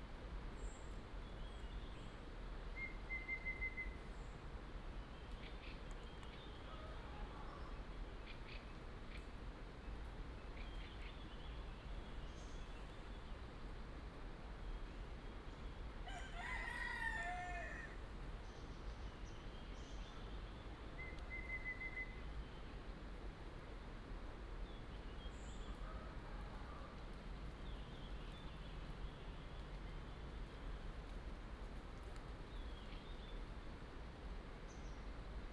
水上巷, Puli Township - In the morning
In the morning, Chicken sounds, Chirp
26 March 2016, ~06:00